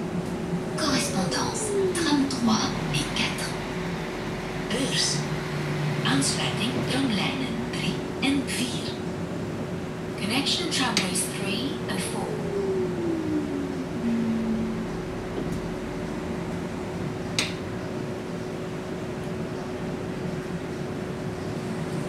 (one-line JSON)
{"title": "Bus, Brussel, Belgium - Bus 33 between Louise and Dansaert", "date": "2022-05-24 08:40:00", "description": "Windows open, air vconditionning in the small electric bus.\nTech Note : Olympus LS5 internal microphones.", "latitude": "50.85", "longitude": "4.35", "altitude": "25", "timezone": "Europe/Brussels"}